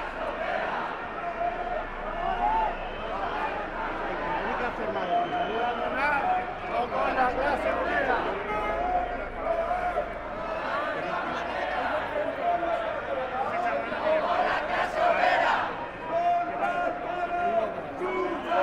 Sant Francesc, Valencia, Valencia, España - 1 de Mayo
1 de mayo
Valencia, Spain, 1 May 2015, 11:59